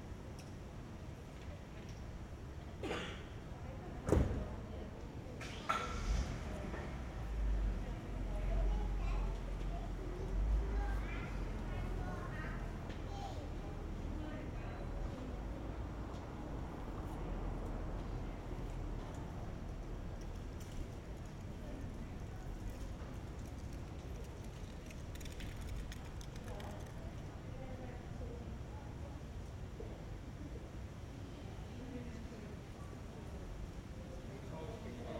Zürich, St. Peterhofstatt, Schweiz - Kleiner Stadtplatz
Spielende Kinder, Handwerker, Linienflugzeug, Passanten, Kinderwagen über Pflastersteine, Glockenschlag 17:00 Uhr von Kirche St Peter und Fraumünster, kl. Flugzeug, Rollkoffer über Pflastersteine.